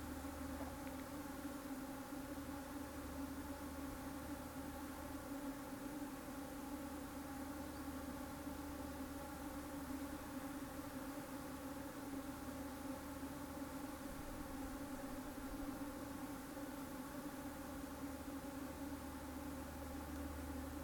Springtime flowering in mountainside pine trees forest over the sea at midday. Bees and other insects blend in to make a wonderful background sound.